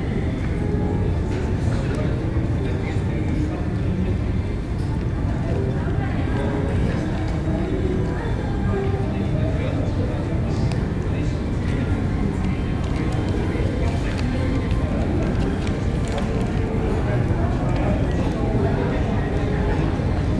The Lobby of a Liner, North Atlantic Ocean. - Lobby
The main lobby of a liner, the pursers office, the tour office, double staircase two decks high, ceiling four decks high, grand, smart and the social meeting place on the ship. The casino, shops without price tags and the ship's bell are present. You are greeted here when you board the ship and directed to the lifts just along the main concourse. Sometimes a string quartet, pianist or harpist plays. A jumble sale is held on the concourse every week and is packed.
MixPre 3 with 2 x Beyer Lavaliers.
May 2018